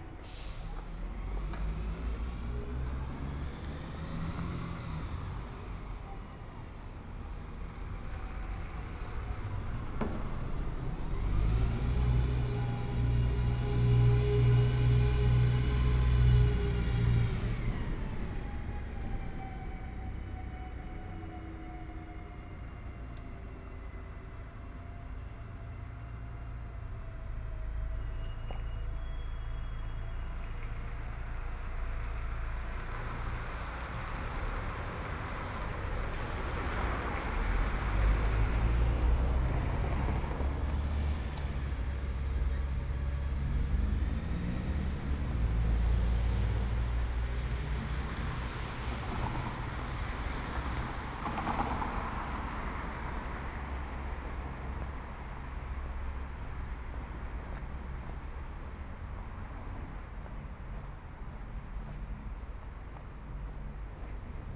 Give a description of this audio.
Tuesday March 17 2020. Walking in San Salvario district in the evening, including discard of plastic waste, one week after emergency disposition due to the epidemic of COVID19. Start at 8:55 p.m. end at 9:01 p.m. duration of recording 26'16'', The entire path is associated with a synchronized GPS track recorded in the (kml, gpx, kmz) files downloadable here: